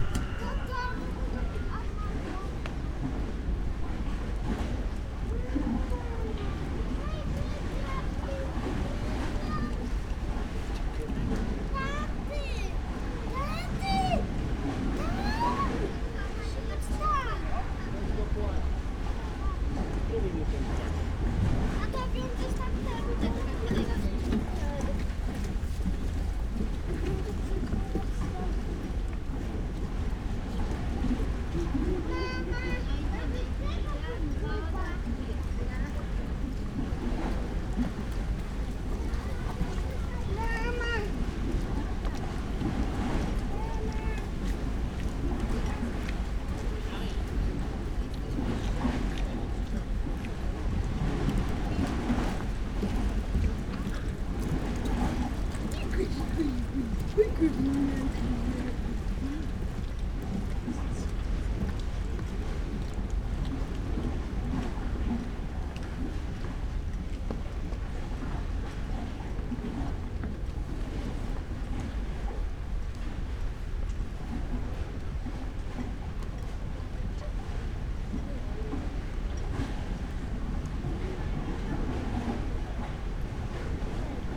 light rain, people seeking for hiding on the pier
Sopot, Poland, 14 August